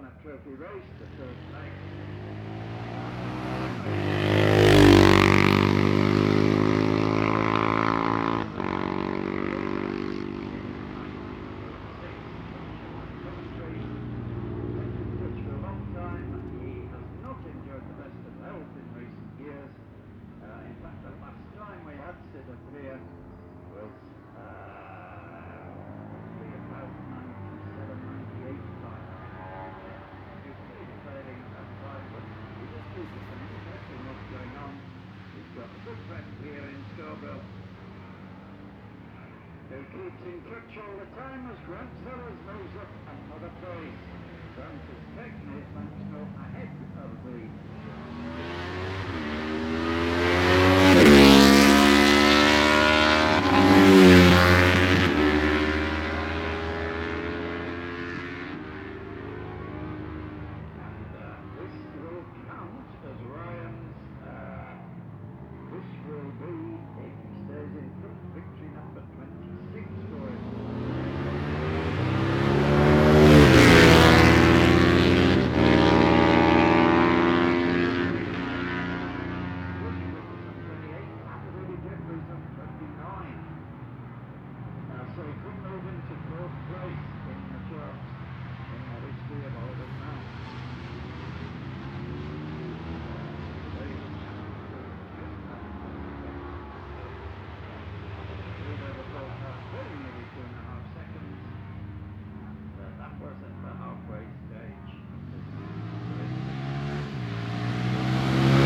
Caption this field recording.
barry sheene classic 2009 ... race ... one point stereo mic to minidisk ...